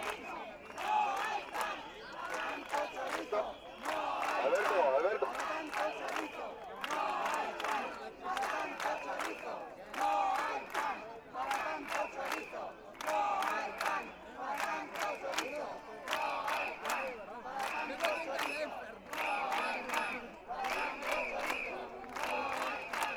Movement against the economic cuts in the health service, afecting what is understood as a service and not as an elit privilege.
Barcelona, Spain, 13 April